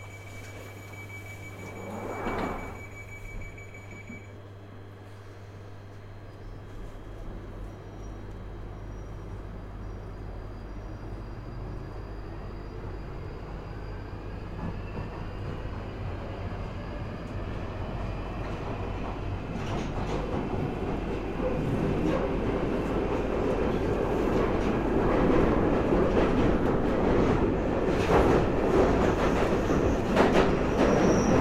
Underground from Waterloo Station to Tottenham Court Road
Travelling on London Underground train from Waterloo to Tottenham Court Road Stations.